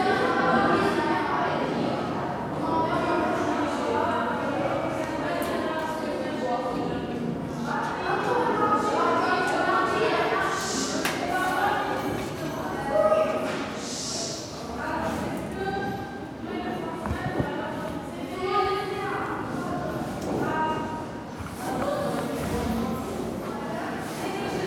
Bâtiment, collège de Saint-Estève, Pyrénées-Orientales, France - Résonance d'escalier
Preneur de son : Nabil